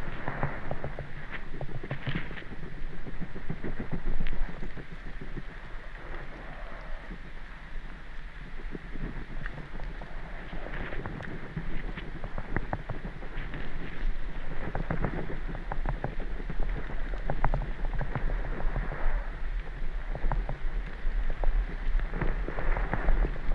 River flow sound throught underwater microphones
Anykščių rajono savivaldybė, Utenos apskritis, Lietuva